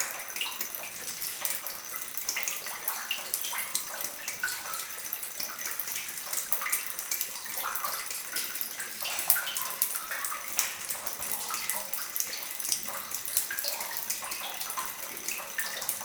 Short soundscape of an underground mine. Rain into the tunnel and reverb.